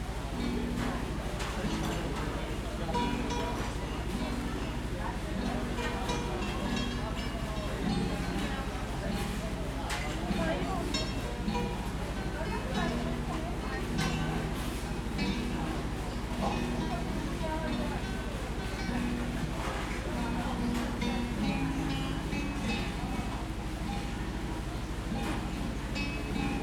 Tehran Province, Tehran, مسیر راهپیمایی درکه - پلنگ چال، Iran - Breakfast